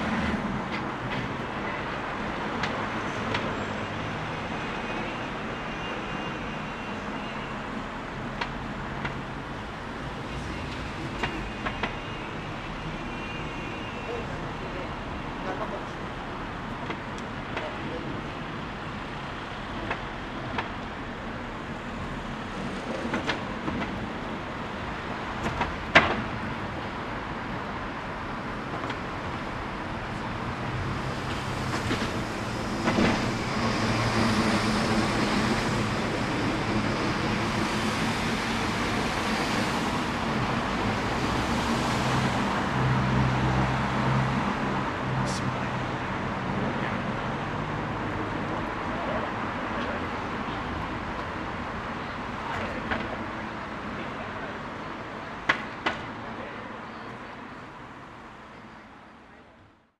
Dublin Rd, Belfast, UK - Dublin Road
Recording in front of two bars (Filthy’s and The Points), busy street with many passerby and vehicle traffic. This is a day before Lockdown 2 in Belfast.